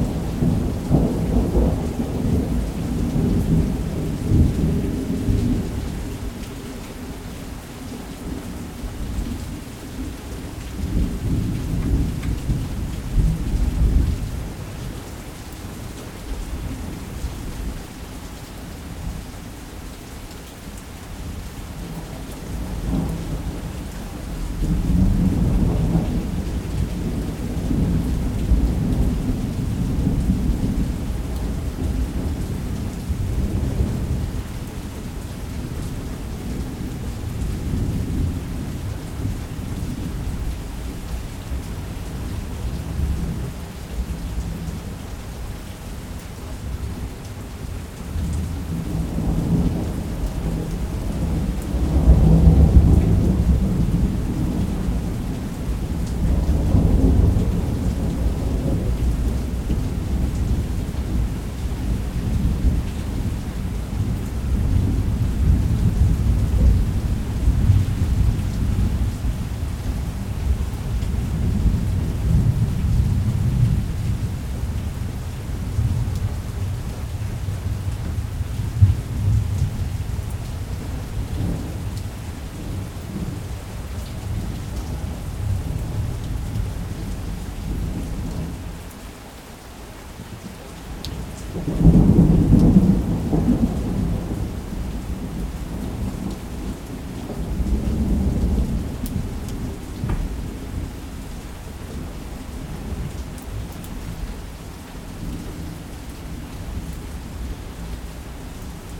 Calot, Azillanet, France - Thunder and rain during summer in South of France
Thunder and rain in south of France during summer, recorded from the balcony of my house.
Recorded by an AB Setup with two B&K 4006 Omni microphones.
On a 633 Sound Devices recorder.
Sound Ref: FR-180812-3